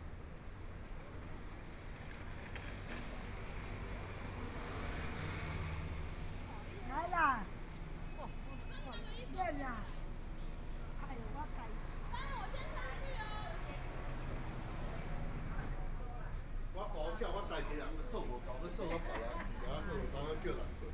Walking in the road, Through different Various shops, Binaural recordings, Zoom H4n+ Soundman OKM II
Taipei City, Taiwan